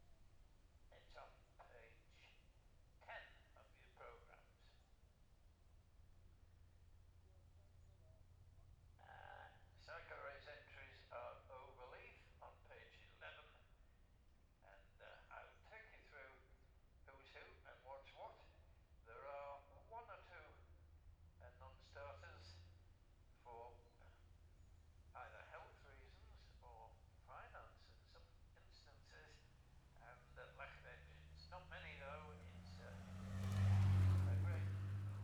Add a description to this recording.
the steve hensaw gold cup 2022 ... pre race ... newcomers laps ... dpa 4060s on t-bar on tripod to zoom f6 ...